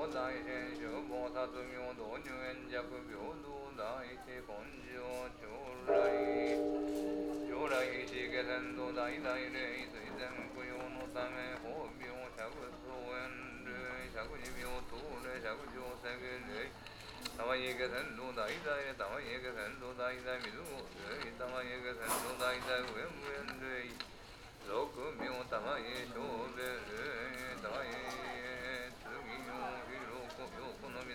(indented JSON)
{
  "title": "Osaka, Tennōji district, Shitennoji Temple area - chanting + bell",
  "date": "2013-03-31 12:11:00",
  "description": "chanting in great unison with the shrine bell. voice recorded from a speaker outside of the building.",
  "latitude": "34.65",
  "longitude": "135.52",
  "altitude": "18",
  "timezone": "Asia/Tokyo"
}